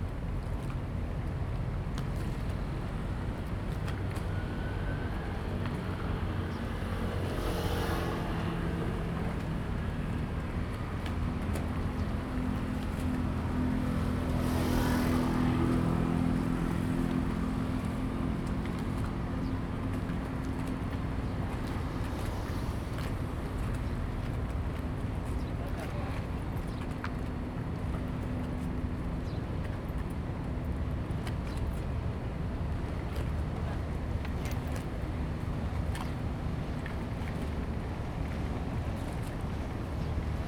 馬公港, Penghu County - In the dock
In the dock
Zoom H2n MS+XY
Penghu County, Taiwan, 22 October 2014